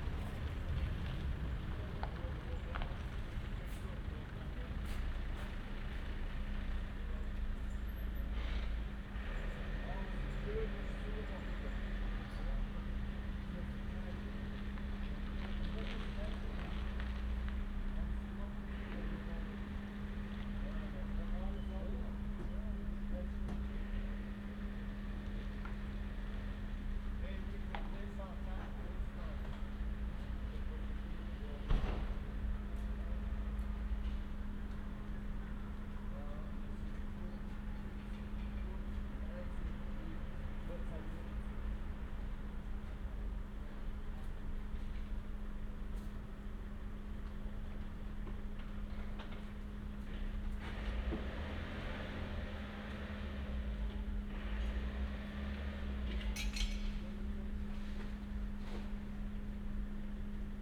Viaduktstrasse, Zürich, Schweiz - Letten-Viadukt
Walking and pause and listening on a cold and sunny day in December on this beautiful pathway next to the railway tracks, Letten-Viadukt in Zürich 2009.